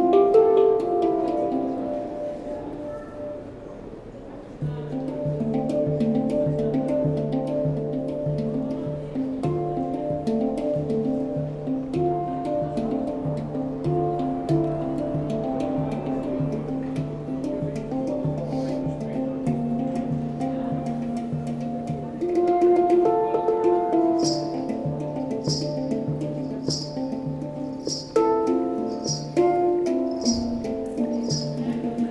Brugge, België - Street musicians
Street musician with guitar and after a walk in the center, a street musician plays hang, a rather particular rhythmic and melodious instrument. It’s the troubadour Curt Ceunen.